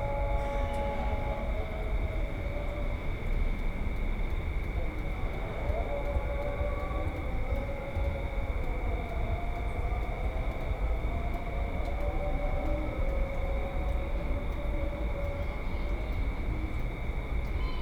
Broads Rd, Lusaka, Zambia - Lusaka evening prayers...
call for evening prayers from Lusaka Mosques....
Lusaka Province, Zambia, 18 June 2018